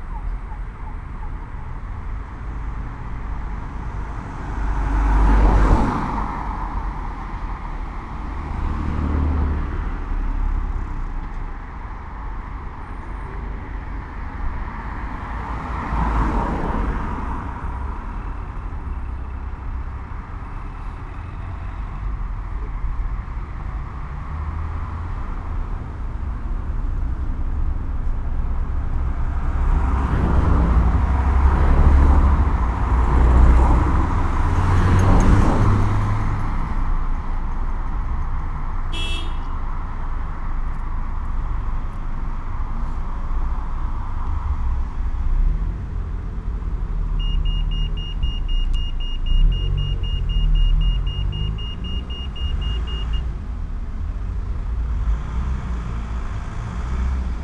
Pedestrian Crossing and Fire Engine, Malvern, UK
A quiet wet day. A fire engine suddenly passes then Belle Vue Terrace returns to normal traffic, the sound of the crossing tone and general ambient audio.
I recorded this by placing my rucksack with the recorder and mics on the footpath at the base of the crossing sign and hoped something would happen.
MixPre 6 II with 2 Sennheiser MKH 8020s.
30 September 2022, 11:46